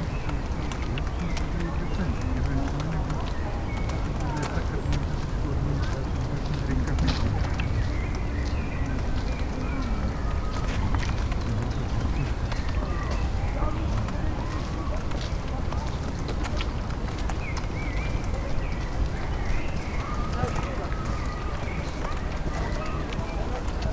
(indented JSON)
{
  "title": "Poznan, at Rusalka lake - air pockets",
  "date": "2015-06-27 14:37:00",
  "description": "lots of air pockets bursting on the surface of the lake.",
  "latitude": "52.43",
  "longitude": "16.88",
  "altitude": "73",
  "timezone": "Europe/Warsaw"
}